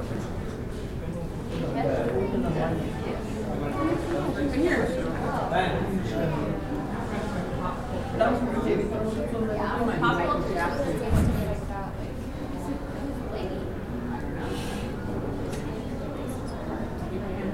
W 14th Ave Pkwy, Denver, CO - Native American Section
A recording of the Native American section of the Denver Art Museum
CO, USA, 2 February 2013